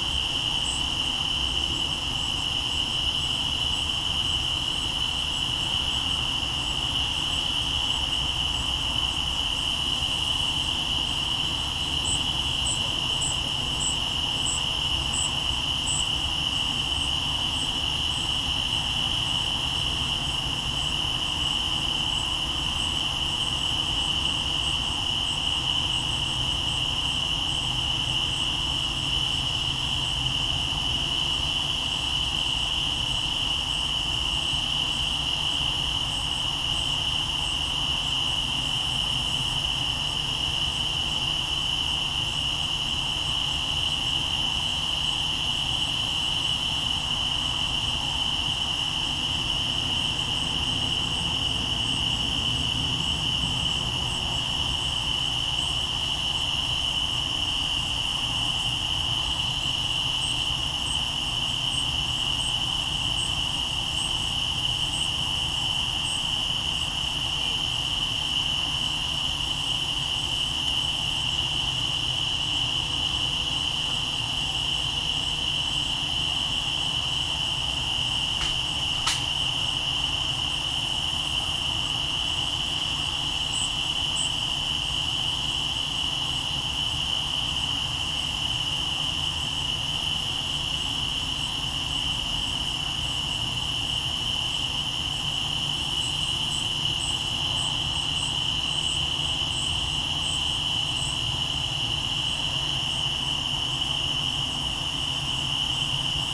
East Austin, Austin, TX, USA - Post-Leper River Blue Moon
Recorded onto a Marantz PMD661 with a pair of DPA 4060s.